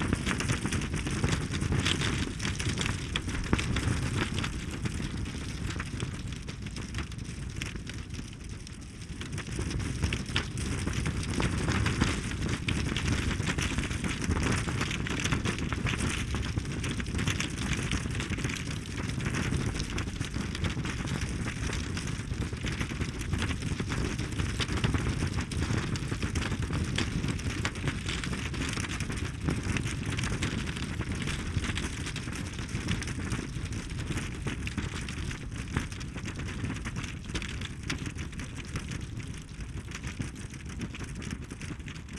barrier tape marks a sanctuary for birds and other animals living on the ground. tape fluttering in cold north wind.
(SD702, Audio Technica BP4025)
Deutschland, European Union, 1 April 2013